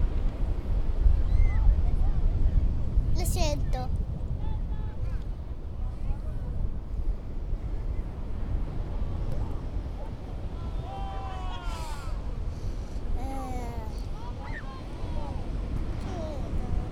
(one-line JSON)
{"title": "ocean 2, Aveiro (Luigi Mainenti)", "latitude": "40.64", "longitude": "-8.75", "timezone": "Europe/Berlin"}